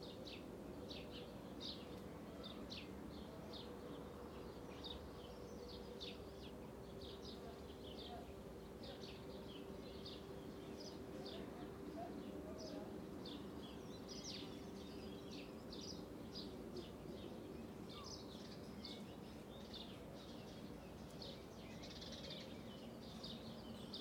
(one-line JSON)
{"title": "Chemin des Sablons, La Rochelle, France - long 30 neighborhood sound sequence", "date": "2020-04-11 09:47:00", "description": "long neighborhood sound sequence at 10 a.m.\nCalm of covid19\nORTF DPA4022 + Rycotte + Mix 2000 AETA = Edirol R4Pro", "latitude": "46.17", "longitude": "-1.21", "altitude": "10", "timezone": "Europe/Paris"}